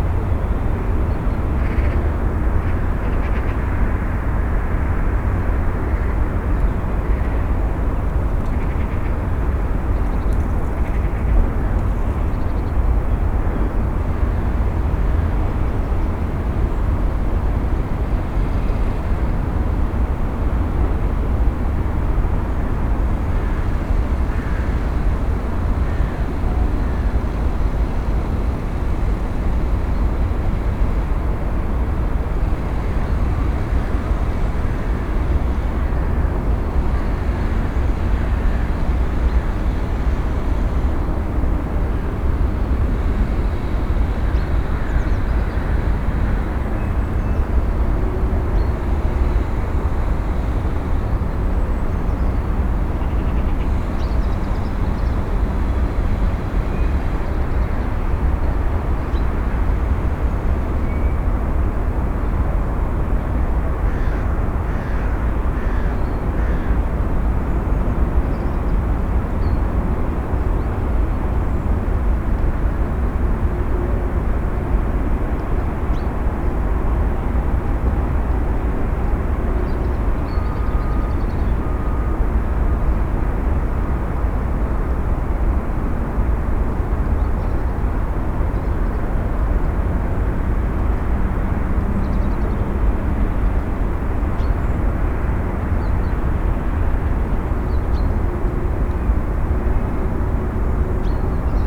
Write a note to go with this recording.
up on the city, it seems like all the noise is coming there, car traffic, factories, a few winter birds across the recording. PCM-M10, SP-TFB-2, binaural.